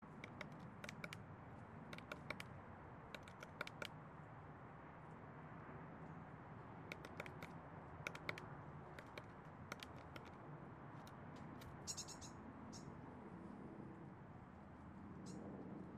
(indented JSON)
{
  "title": "Mountain blvd. Oakland - chickadee - Mountain blvd. Oakland",
  "date": "2010-03-18 03:03:00",
  "description": "Chickadee working on her nest made out of an old kalabash and hang in the oak tree.. At the end you can hear her voice/call",
  "latitude": "37.79",
  "longitude": "-122.18",
  "altitude": "92",
  "timezone": "US/Pacific"
}